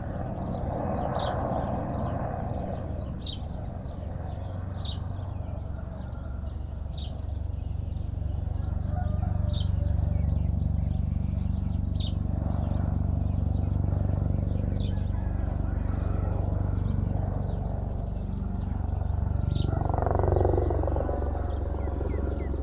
20171123_0603-0612 arrivée des hélicos de tourisme CILAOS
Mais là c'est le ballet d'ouverture pour le réveil.
23 November, ~06:00